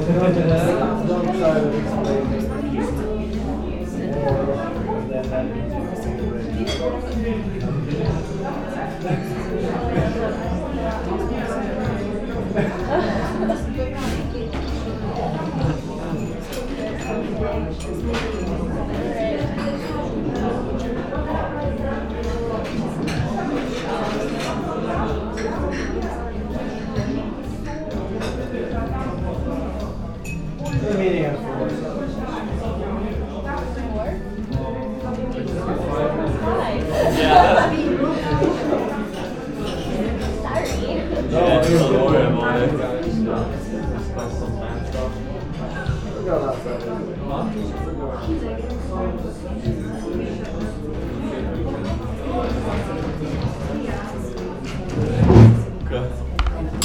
tea, cafe Čajek, slovenska ulica, maribor - one of the afternoons
Maribor, Slovenia, 8 January 2015